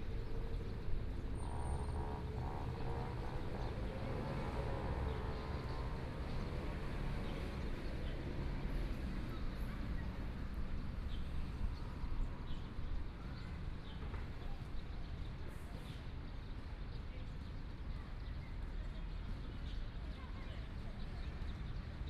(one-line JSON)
{"title": "Jiancheng Park, Taipei City - in the Park", "date": "2014-02-28 13:47:00", "description": "Afternoon sitting in the park, Traffic Sound, Sunny weather, Playing badminton\nPlease turn up the volume a little\nBinaural recordings\nSony PCM D100 + Soundman OKM II", "latitude": "25.05", "longitude": "121.52", "timezone": "Asia/Taipei"}